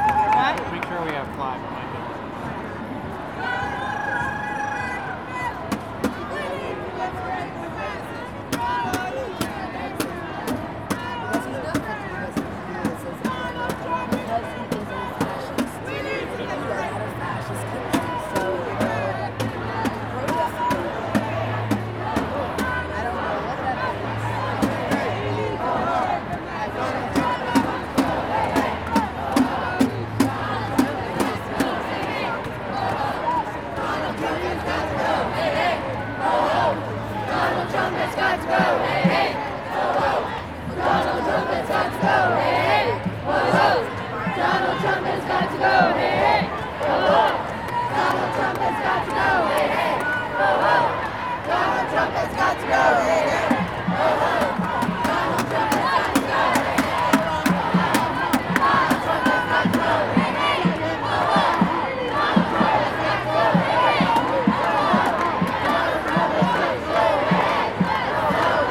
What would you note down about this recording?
Anti-Trump Protests in 5th Avenue next to Trump Tower. Zoom H4n